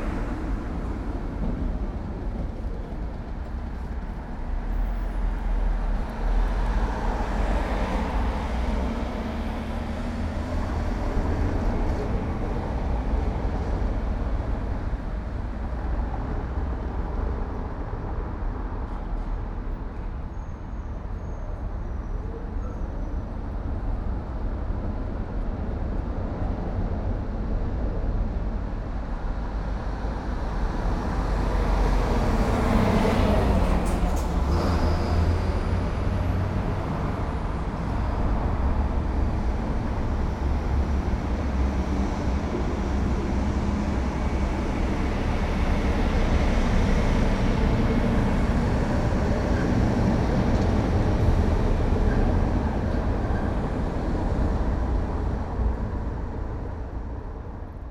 Brussels, Avenue Brugmann, Ambulance and tram 92 afterwards.
Saint-Gilles, Belgium, 30 November 2011, 11:17